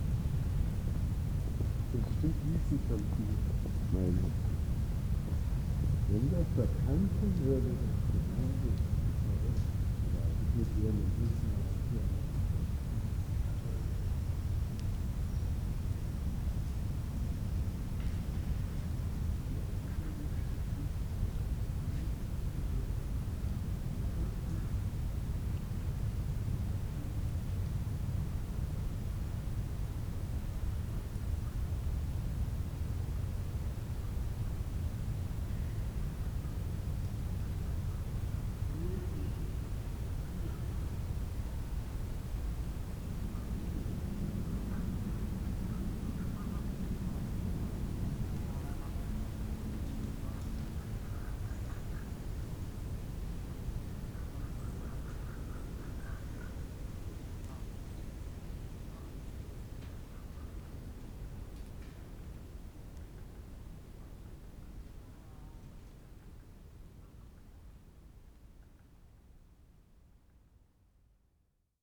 mahlow, nachtbucht/mahlower seegraben: mauerweg - borderline: berlin wall trail
a plane crossing the sky, ramblers, cyclists
borderline: october 1, 2011
Berlin, Germany